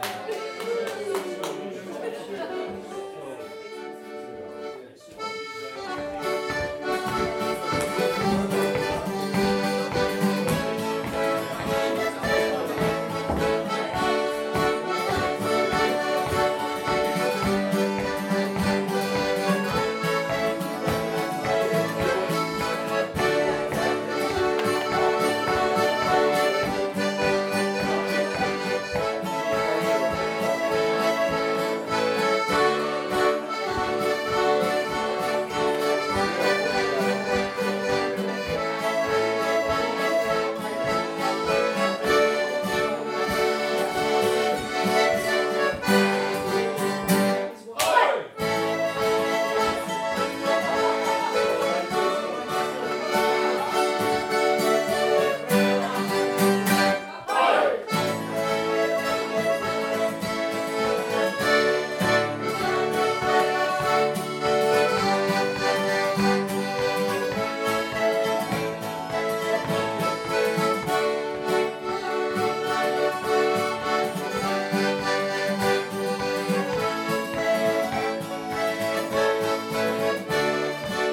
Papa Westray, Orkney, UK - Saturday Pub Night
Trio performing traditional Scottish Music during the Saturday Pub Night in Papa Westray, Orkney Islands.
Papa Westray is one of the most northern islands in Orkney, inhabited by circa 70 people. Pub is open only on Saturday nights. The trio was formed by three generation of musicians, from teenage to elderly.
16 August 2014, 21:00